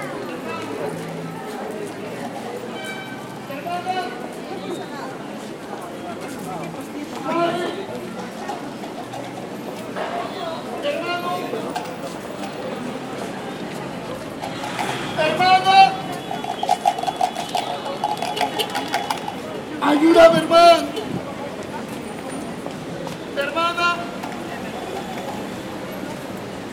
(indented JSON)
{
  "title": "jiron Unión Lima. Perú",
  "date": "2011-07-18 16:25:00",
  "description": "principal street on downtown. Very commercial place.",
  "latitude": "-12.05",
  "longitude": "-77.03",
  "altitude": "94",
  "timezone": "America/Lima"
}